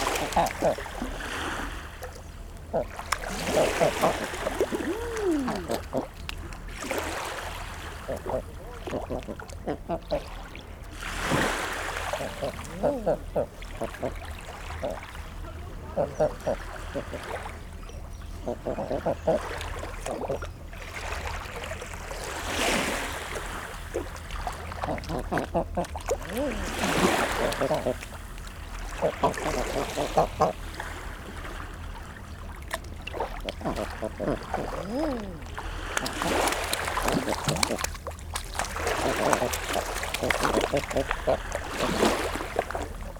Seahouses, UK - feeding eider ducks ... with bread ...
Seahouses harbour ... feeding eider ducks with bread ... bit surreal that ... male and female calls ... pattering of their webbed feet ... calls from herring gulls ... black-headed gulls ... house sparrow ... much background noise ... lavalier mics clipped to baseball cap ...
15 November, ~14:00